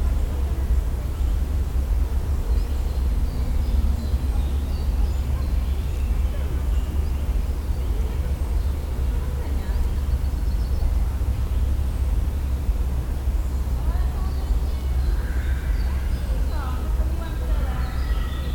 Forest, Biała Wielka, Poland - (339 ORTF) Forest atmosphere
The atmosphere from the forest - afternoon in July.
Recorded with internal mics of Sony PCM D100